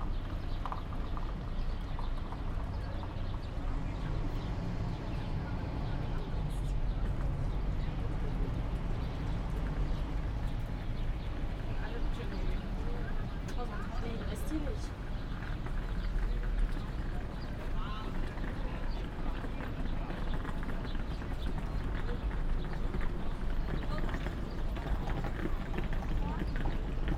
Weimar, Hauptbahnhof, Weimar, Germany - A long narrative of place in Weimar - clear spaces
*Best listening experience on headphones.
Engaging sound events within a clear acoustic space of the forecourt of the main station of Weimar. Radiogenic voices, movements, birds and people. Major city arrivals and transits take place here. Stereo field is vivid and easily distinguishable.
Recording and monitoring gear: Zoom F4 Field Recorder, LOM MikroUsi Pro, Beyerdynamic DT 770 PRO/ DT 1990 PRO.